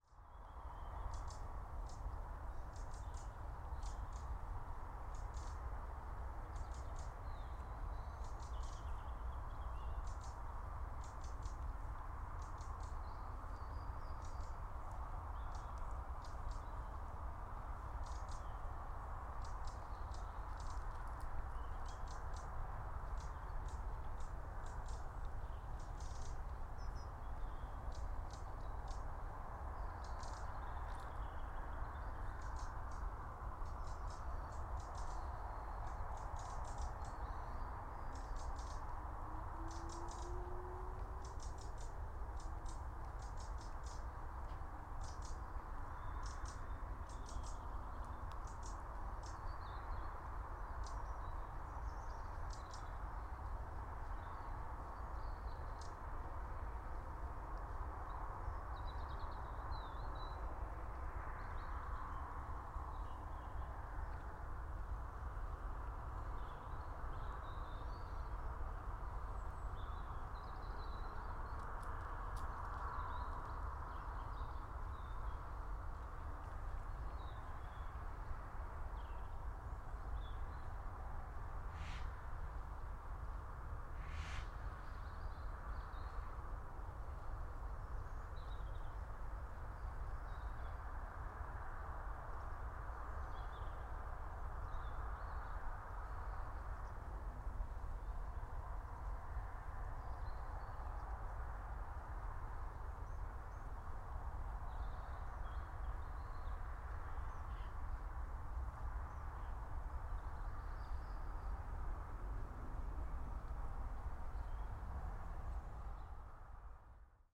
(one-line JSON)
{"title": "Pontop Pike transmitting station, County Durham, UK - Pontop Pike transmitting station", "date": "2016-08-24 20:15:00", "description": "Recording on lane leading to Pontop Pike transmitting station. Facing North into field with cows, farting. Bird in bush opposite and other birds sounds. Recorded at sunset on Sony PCM-M10", "latitude": "54.87", "longitude": "-1.77", "altitude": "297", "timezone": "Europe/London"}